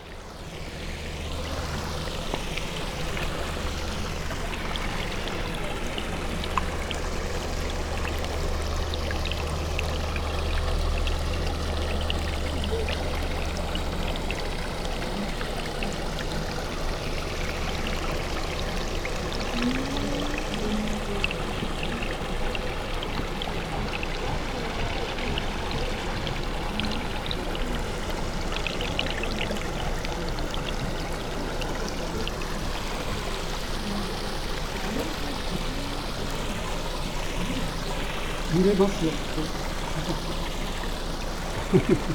pond, Taizoin, zen garden, Kyoto - small bridge, golden fishes
blowing bubbles ... want to swallow swinging colorful microphones
Kyoto Prefecture, Japan, 4 November 2014